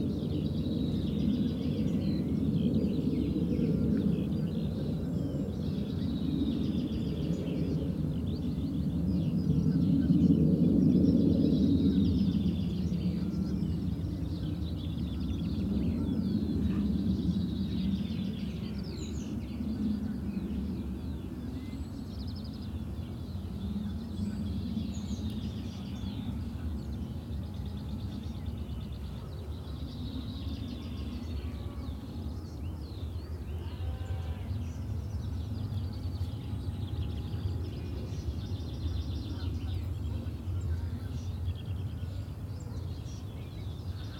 Sheep field, Rushall farm, Bradfield, UK - Ewes and lambs together in the field
This is the beautiful sound of a field of sheep, first thing in the morning. There are ewes and lambs together, and many birds in the woodland area beside them. At 9am, it's beautifully peaceful here and you can hear the skylarks who live on this organic farm in harmony with their sheep buddies.